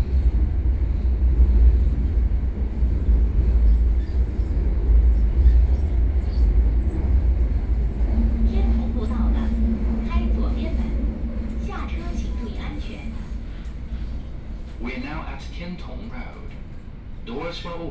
Haining Road, Shanghai - Shanghai Metro
Out of the train entering the station interchange, Line10 (Shanghai Metro), from North Sichuan Road station to East Nanjing Road station, Binaural recording, Zoom H6+ Soundman OKM II